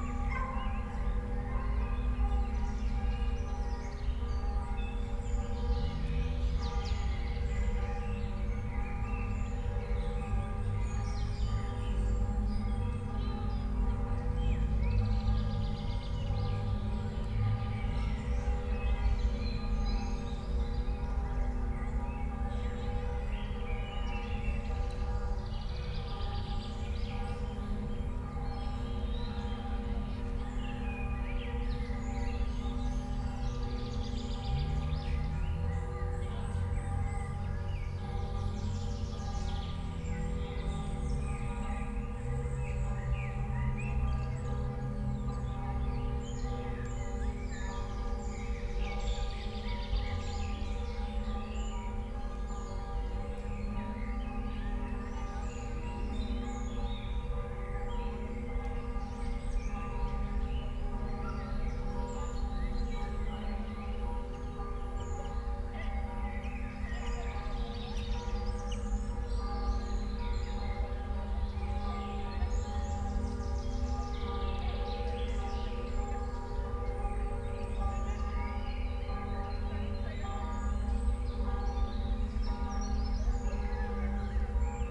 Park Górnik, Oświęcimska, Siemianowice Śląskie - church bells, park ambience
churchbells heard in Park Górnik, park ambience, distant rush hur traffic drone
(Sony PCM D50, DPA4060)